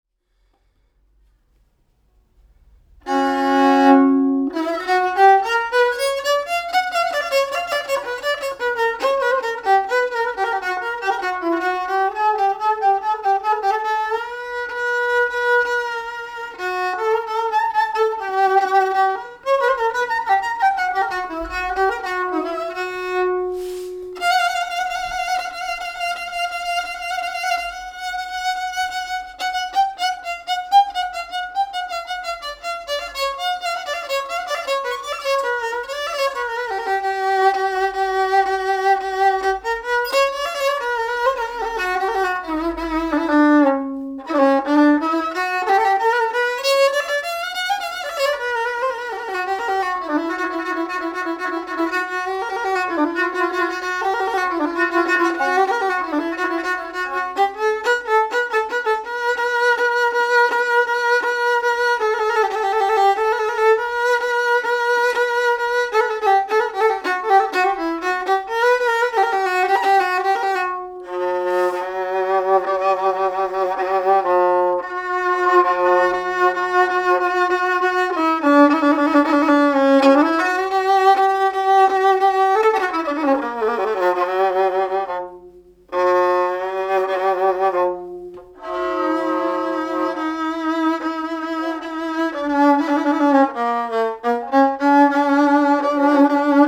Via S. Martino, Massa MS, Italia - Il violino di Abdul
Abdul è arrivato a Borgo del Ponte da Casablanca, è un musicista e possiede un antico violino. L'ha fatto analizzare da un liutaio e dice che risale ai primi del '900.